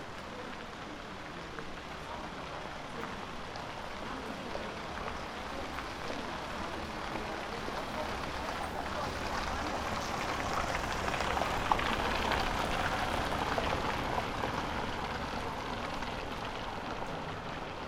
Old Town. Placing the Christmas tree on Nov. 17, 2008. Later walking to east. - Old Town, Townhall square. Placing the Christmas tree on Nov. 17, 2008. Later walking to east.